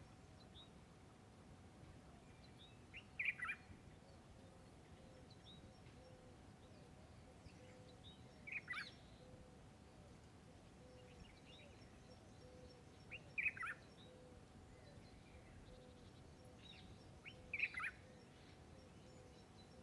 Ein Hod, Israel - 01 village 5am
5am, sun rise, birds and flys